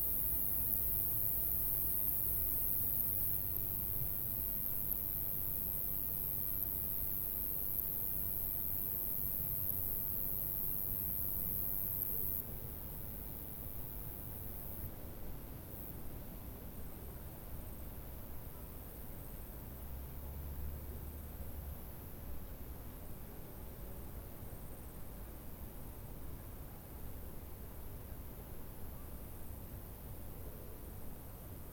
V Drago, Maribor, Slovenia - corners for one minute
one minute for this corner: V Drago